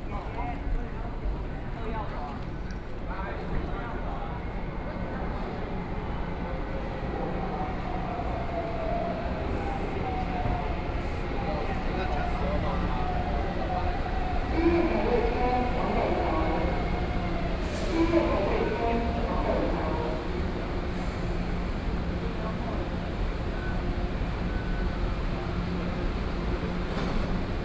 Chiang Kai-Shek Memorial Hall Station - walk in the Station
walking in the Station, Sony PCM D50 + Soundman OKM II